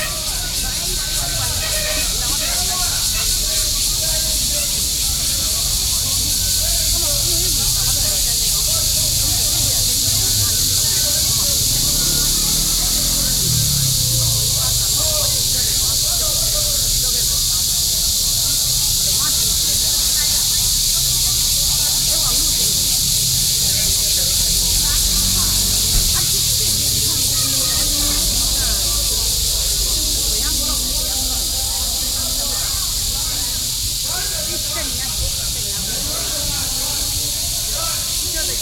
Guānhǎi Boulevard, Bali District, New Taipei City - In large trees
Sound of holiday and People to escape the hot weather, Cicadas, In the shade, Binaural recordings